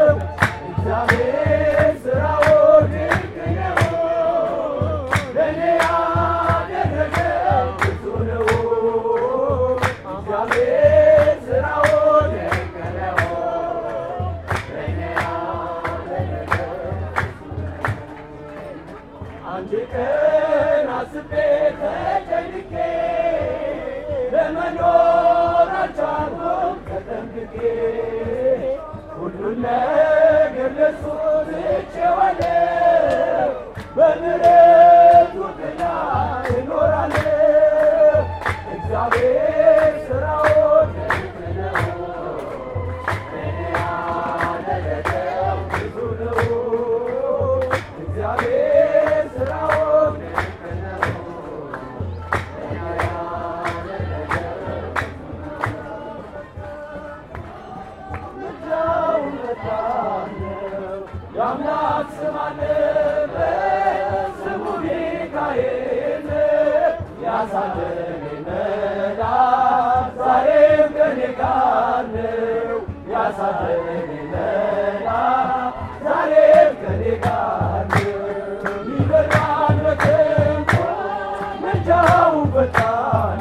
Kirkos, Addis Ababa, Éthiopie - Songs for wedding at the Ghion Hotel
Une dizaine d'hommes, une dizaine de femmes entonnent plusieurs chansons pour célébrer le mariage d'un couple qui se déroule. Beaucoup de caméras et d'appareils photos captent cet évènement, ainsi que les autres mariages autour. Il fait très beau. Un grand Soleil illumine la scène.
17 January 2015, 16:30